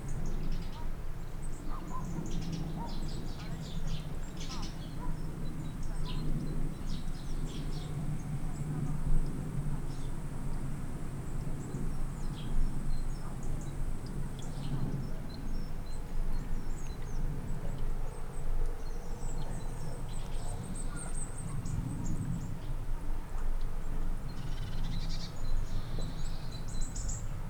Unieszewo, Las-cichy - Calm forest